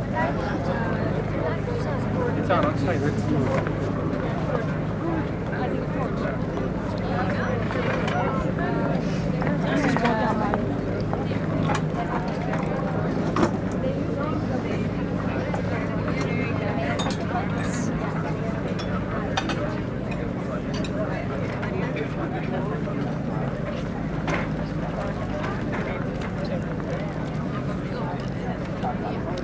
During a day off, the main tourist avenue of Copenhagen called Nyhavn. Most people are discreet.